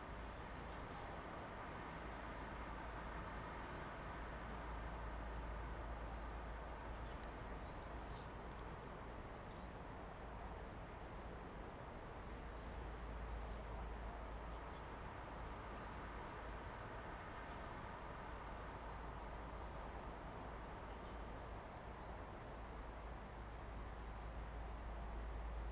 馬山三角堡, Jinsha Township - wind and the tree
Birds singing, wind and the tree
Zoom H2n MS +XY